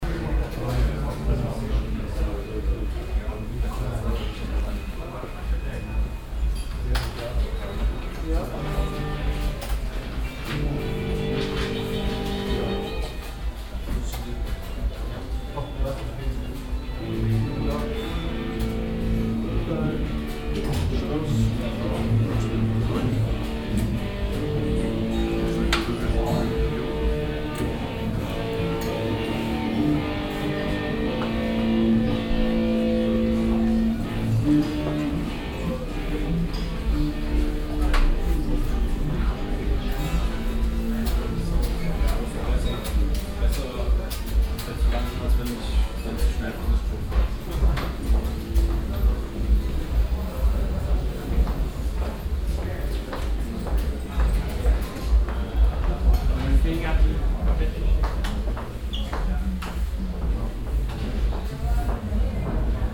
soundmap nrw: social ambiences/ listen to the people - in & outdoor nearfield recordings, listen to the people
8 July, 16:44